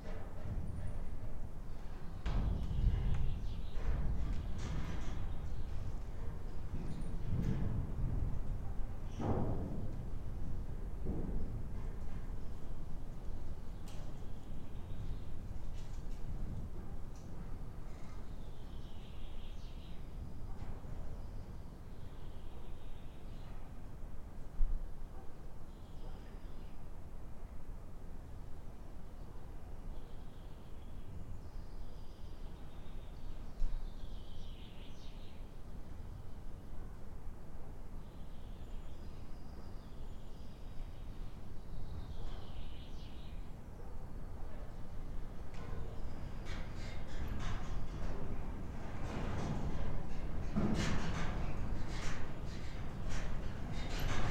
Ilciukai, Lithuania, in abandoned mill
old abandoned watermill. wind plays with the collapsing roof construction
Utenos apskritis, Lietuva, April 2020